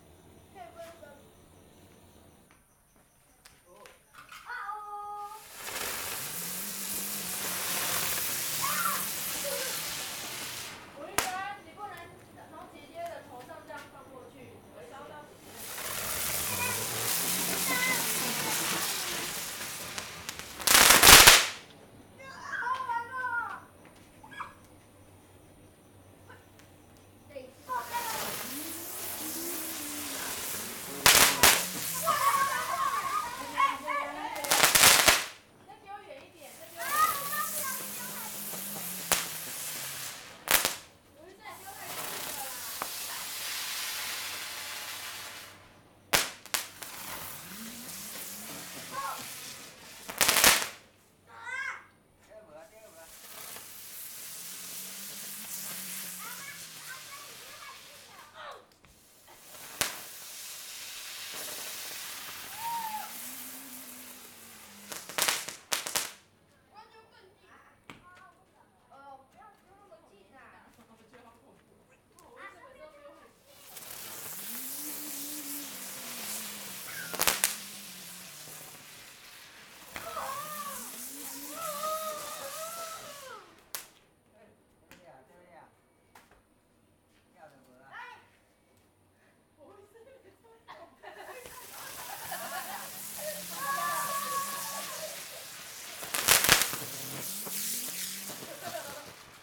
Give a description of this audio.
Kids playing firecrackers, Traditional New Year, Zoom H6 M/S